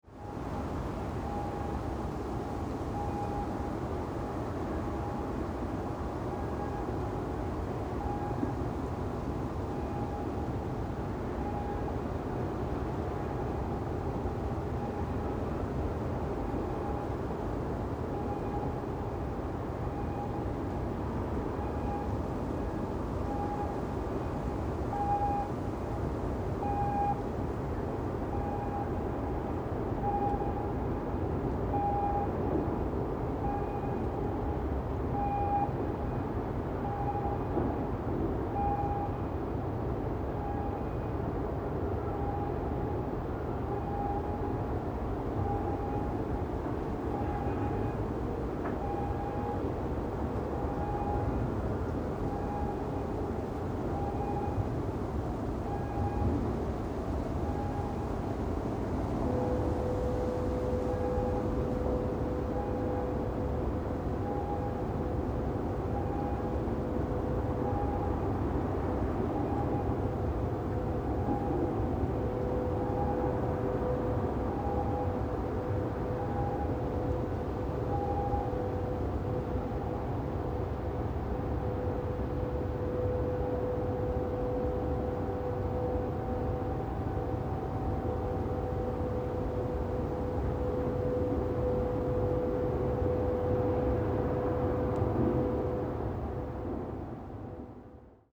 Grevenbroich, Germany - Garzweiler mine atmosphere at night, distant bleeping trains
The trains carrying coal from the mine to the nearby power stations bleep as they move. It is a stormy night and the sound come and goes in the wind.
1 November 2012, ~18:00